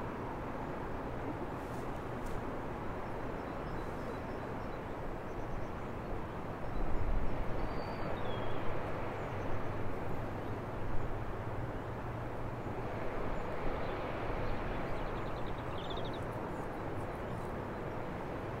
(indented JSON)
{
  "title": "underneath prison on Portland, Dorset, UK - half way up steep climb to top of Portland",
  "date": "2013-06-08 12:09:00",
  "latitude": "50.55",
  "longitude": "-2.42",
  "altitude": "74",
  "timezone": "Europe/London"
}